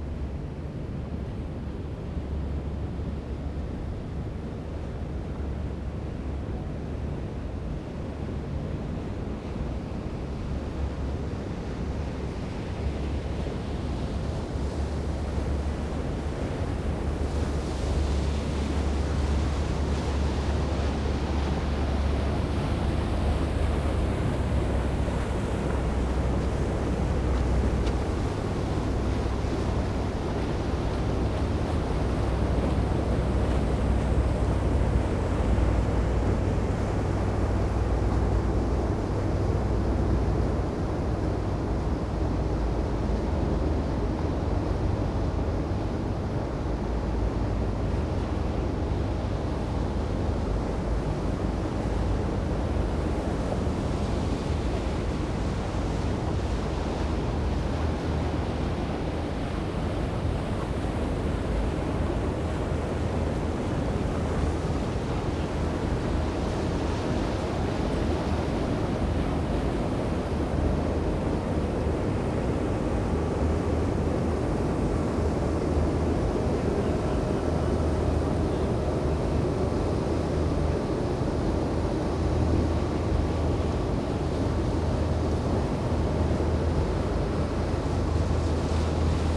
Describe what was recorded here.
tourist boats generating waves, sound of trains and sirens, the city, the country & me: august 5, 2011